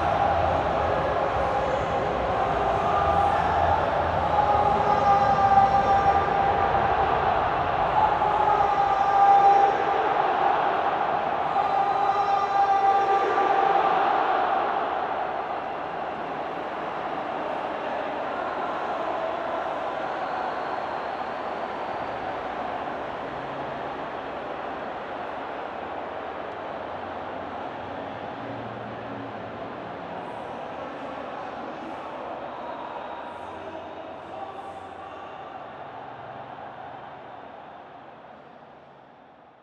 Bd Michelet, Marseille, France - Stade Vélodrome - Marseille - Euros 2016
Stade Vélodrome - Marseille
Demi finale Euro 2016 - France/Allemagne
Prise de son et ambiance à l'extérieure du stade.
7 July 2016, 21:30